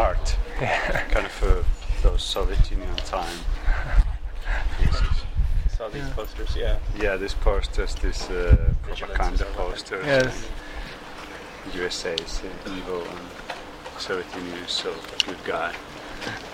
baltijaam market - Ossi's observations in market
conversation with Ossi Kajas about history and trajectory of Baltijaam market